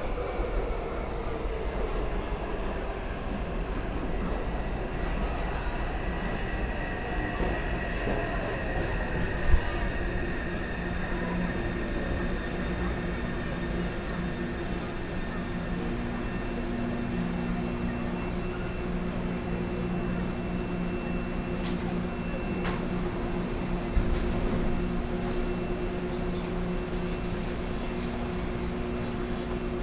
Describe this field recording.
On the platform waiting for the train, Binaural recordings, Sony PCM D50 + Soundman OKM II